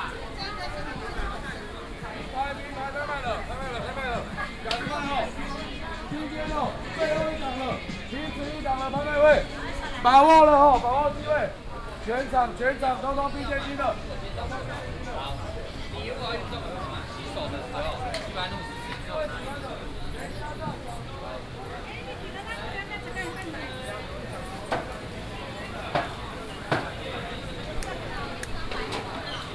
Walking through the Traditional Taiwanese Markets, Traffic sound, vendors peddling, Binaural recordings, Sony PCM D100+ Soundman OKM II
Zhongzheng District, Taipei City, Taiwan, 25 August 2017